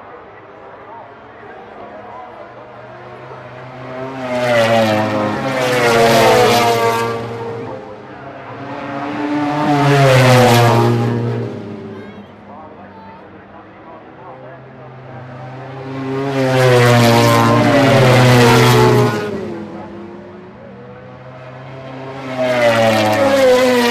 Unnamed Road, Derby, UK - British Motorcycle Grand Prix 2004 ... Race ...
British Motorcycle Grand Prix 2004 ... Race ... stereo one point mic to mini-disk ... commentary ...
25 July 2004, 13:00